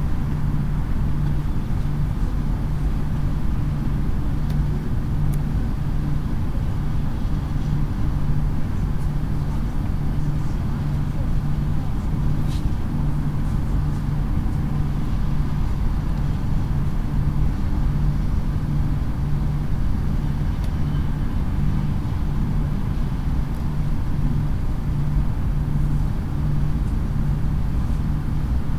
UniGardening HU Adlershof, Newtonstraße, Berlin, Germany - Noise pollution by chemistry building at uni gardening
Sitting on a bench in front of the green house with right ear to the chemistry building and left ear facing to the street.
Recorder: Tascam DR-05
Deutschland, 28 November 2021, 14:30